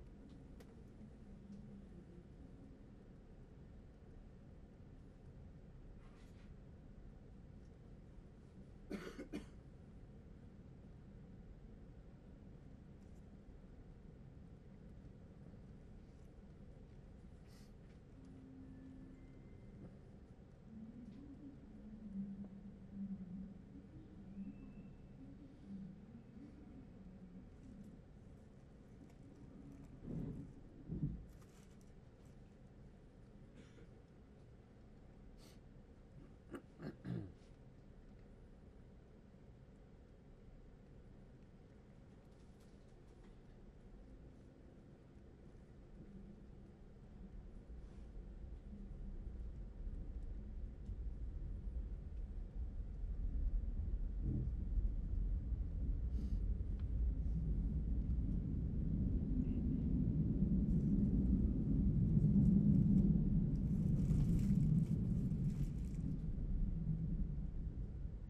Riding with the tram. Every morning the same routine: the well known slight permutation of known faces, places, sounds: always the same and yet slightly different in arrangement and actual occurance (if that's a word). I walk the same way, take a variation of seats in the front of the tram, where every morning more or less the same faces sit: students, kids, office worker, craftsmen, tired, reading, copying homework. The sounds are familiar and yet always slightly different, unique in the moment.
Cologne, Germany, 1 March 2012